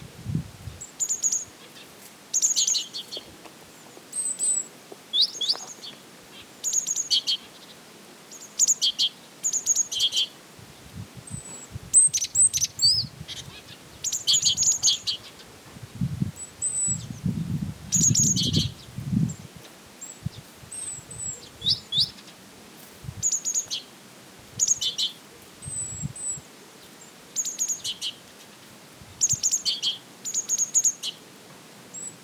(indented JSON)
{
  "title": "Marmashen Monastery Rd, Vahramaberd, Armenia - Monastery at Marmashen under fresh snowfall",
  "date": "2016-02-19 10:40:00",
  "description": "The abandoned monastery at Marmashen, Armenia, under fresh snowfall.",
  "latitude": "40.84",
  "longitude": "43.76",
  "altitude": "1536",
  "timezone": "Asia/Yerevan"
}